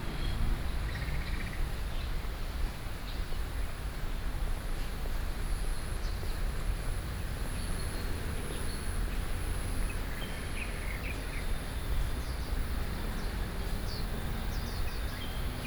Shilin District, Taipei - Standing beneath a tree
Standing beneath a tree, Sony PCM D50 + Soundman OKM II